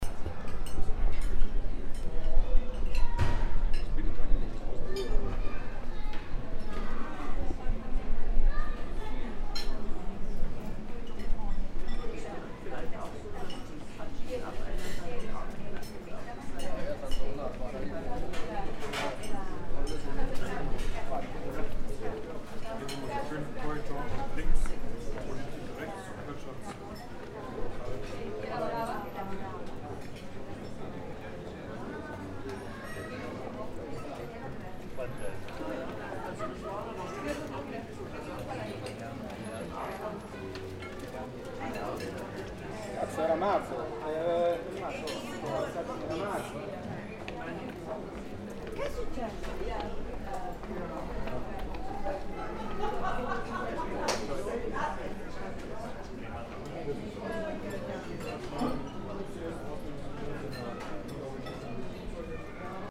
Perugia, Italy - italian trattoria
in front of trattoria bottega dei priori
23 May 2014, 13:30